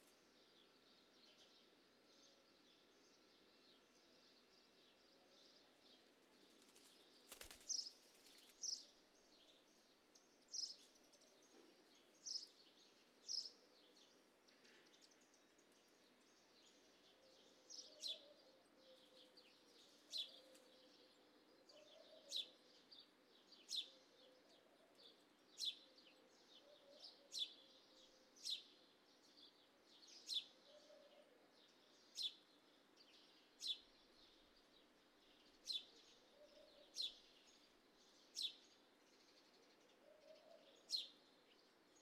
Shaikh Hamad Causeway, Manama, Bahreïn - Novotel Al Dana Resort - Barhain
Novotel Al Dana Resort - Barhain
Ambiance du matin du balcon de ma chambre d'hôtel